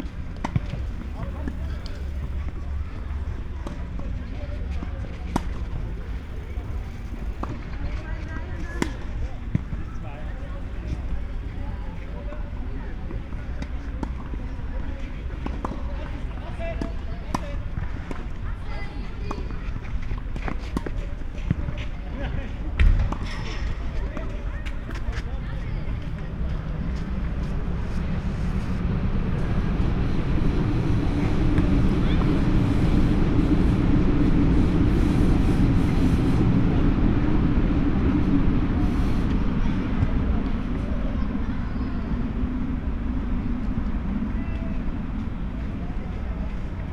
Gleisdreieck, Köln - rail triangle, field ambience
Köln, Gleisdreieck, rail triangle, field ambience, sound of tennis and soccer trainings, trains passing-by
(Sony PCM D50, Primo EM172)